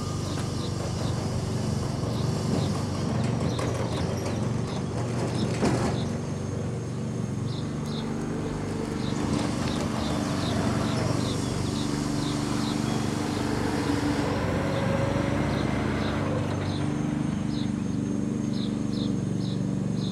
Rudolf-Breitscheid-Straße, Bitterfeld-Wolfen, Deutschland - Greppin morning soundscape
The small town of Greppin is surrounded by chemical factories in the city of Bitterfeld. These are inaudible her, there's only human machines to hear.
Binaural mix from an ambisonic recording with a Sennheiser Ambeo